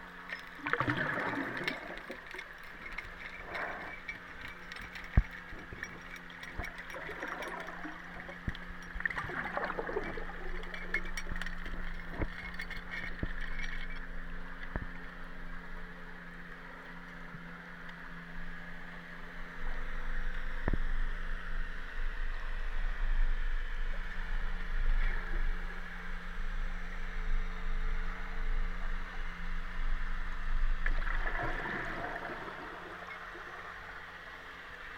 Ricardo St, Niagara-on-the-Lake, ON, Canada - Hydrophone in Lower Niagara River
This recording was made with a hydrophone and H2n recorder on the Lower Niagara River, from a canoe not far from the shore off Navy Hall. The river is over a half-kilometer wide at that point and the depth of the river in the recording area probably about twelve meters, the hydrophone being lowered at varying depths. Powered leisure and recreational fishing boats are heard, their swells and the tinkling of a steel canoe anchor dragging over the rock bottom that did not work well in the river’s strong current. The Niagara River’s health has much improved in recent decades over it’s heavily degraded condition and its many fish species are safe to eat to varying degrees.